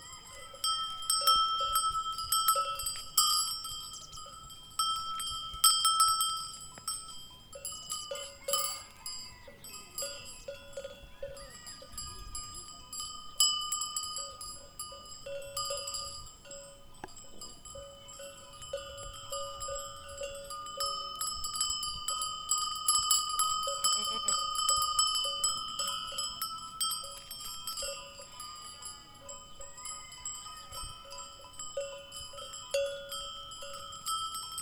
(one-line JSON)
{"title": "Montargil, Ponte de Sor Municipality, Portugal - goat bells", "date": "2012-02-14 08:39:00", "description": "Goats on a field, bells ringing, Foros dos Mocho, Montargil, stereo, church-audio binaurals clipped on fence, zoom h4n", "latitude": "39.07", "longitude": "-8.13", "altitude": "120", "timezone": "Europe/Lisbon"}